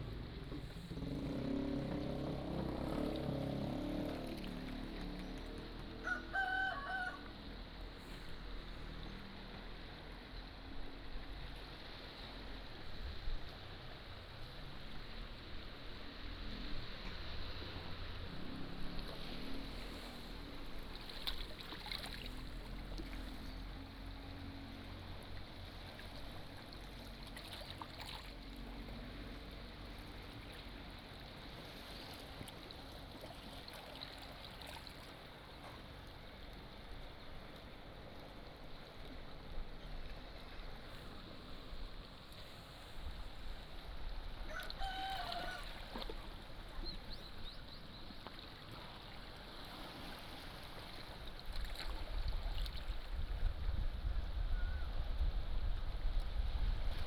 椰油村, Koto island - In a small pier
In a small pier, Sound tide, Chicken sounds, Birds singing, Small tribes